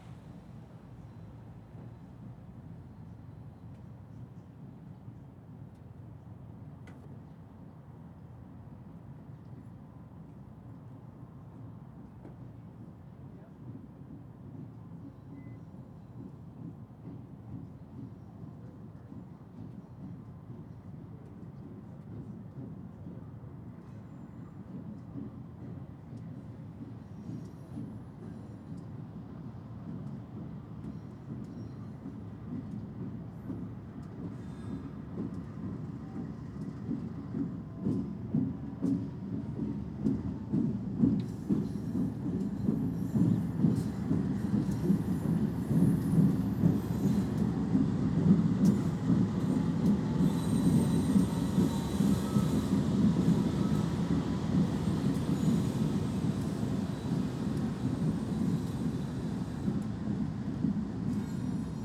{"title": "Forgotten Star Brewery - Forgotten Star", "date": "2022-03-17 12:47:00", "description": "Recorded in the parking lot of the Forgotten Star Brewery adjacent to the railroad tracks leading to the Northtown yard in Fridley, MN", "latitude": "45.06", "longitude": "-93.27", "altitude": "254", "timezone": "America/Chicago"}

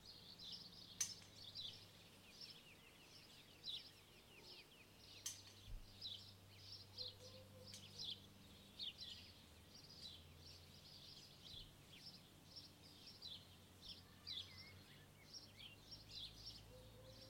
{"title": "edge of Cabrieres, overlooking the Chemin Vieux - evening village ambiance", "date": "2017-06-30 20:00:00", "description": "Overlooking the Chemin Vieux, a neighbour prunes her wisteria, birds call, light aircraft passes above, dog barks, distant childrens voices", "latitude": "43.58", "longitude": "3.36", "altitude": "117", "timezone": "Europe/Paris"}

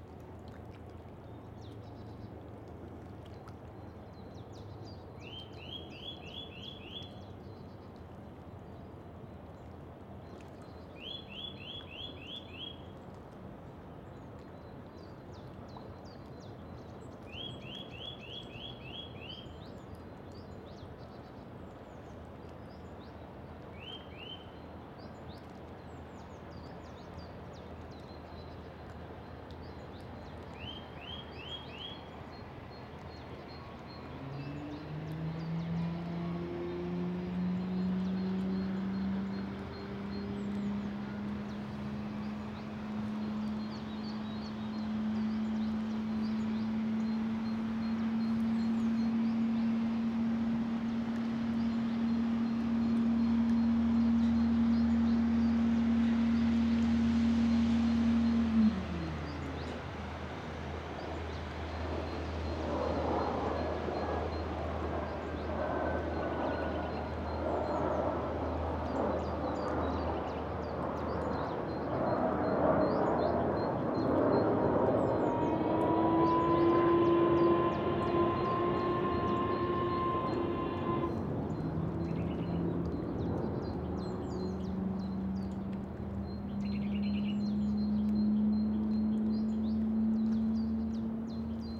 {"title": "Meggenhorn, Schweiz - Anlegen eines Raddampfers", "date": "2001-06-12 09:19:00", "description": "Eine organische Mischung von verschiedensten Geräuschen, die sich gegenseitig ergänzen. Motorboot, Militärflieger, Schiffshorn, Wasserwellen. Und durch alles fährt ungestört der Raddampfer seine Strecken ab.\nJuni 2001", "latitude": "47.03", "longitude": "8.35", "altitude": "437", "timezone": "Europe/Zurich"}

